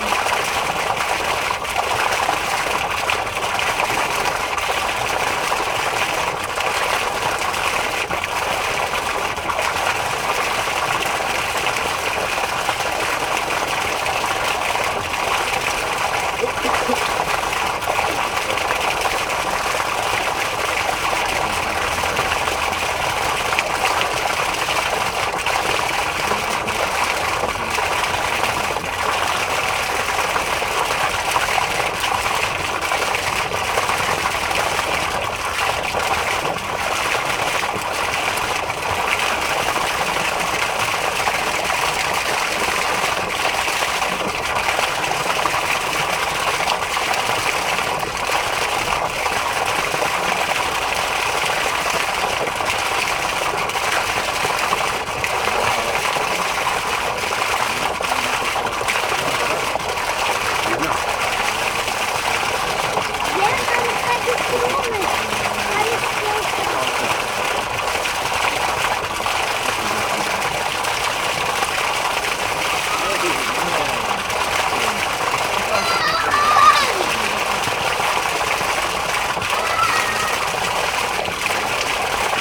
Śródmieście Północne, Warszawa - Fontanna Palac Kultury i Nauki (b)
Fontanna Palac Kultury i Nauki (b), Warszawa